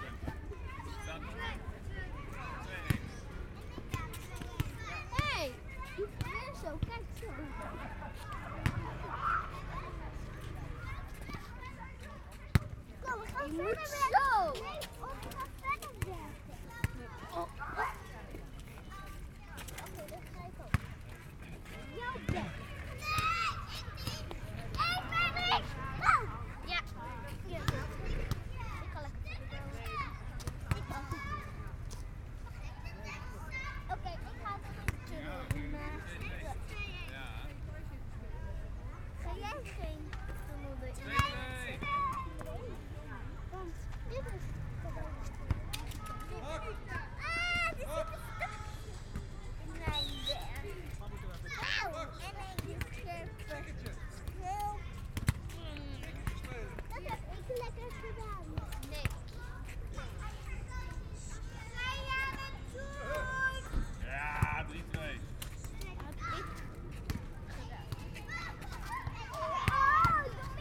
Voordijk, Abcoude, Netherlands - Children playing at local playground
Recorded with two DPA's 4061 as a binaural setup/format.
12 March 2018